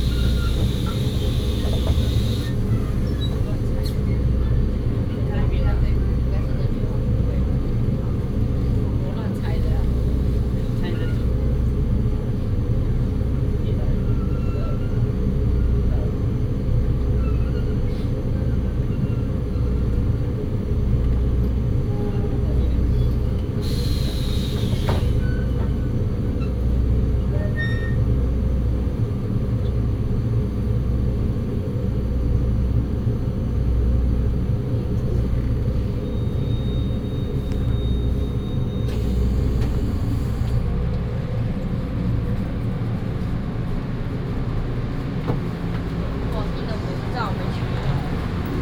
Trains, Arrival Terminal, From the station platform towards the exit
16 August 2016, ~20:00